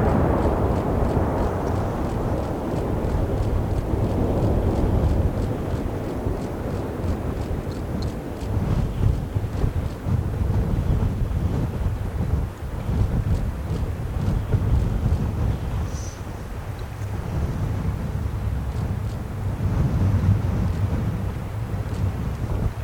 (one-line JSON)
{"title": "Valgrisenche AO, Italia - Valgrisenche Dam adapting work. Explosion", "date": "2013-05-24 12:56:00", "description": "Explosion for the work of adapting the Beauregard Dam in Valgrisenche. Aosta Valley. Italy. In the background flurry of photographs. Recorded with Canon 5D MKIII + Rode Video Mic.", "latitude": "45.62", "longitude": "7.06", "altitude": "1704", "timezone": "Europe/Rome"}